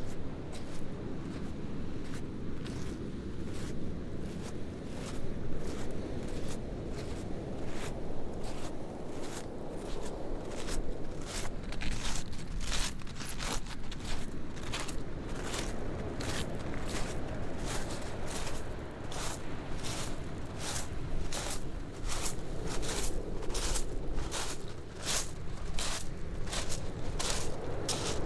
chesil cove, Portland, Dorset, UK - walking on chesil beach